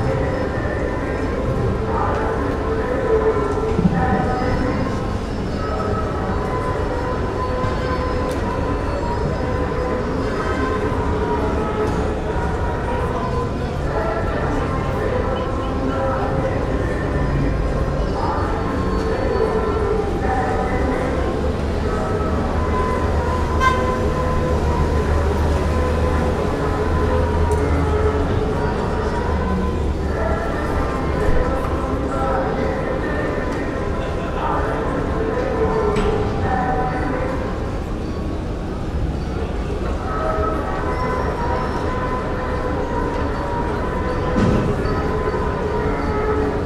Istiklal Cadessi, Beyoğlu/Istanbul Turkey - Microtonal Cleaning truck
A Beyoglu cleaning truck, playing its typical song, then strangely repeating it at a different pitch.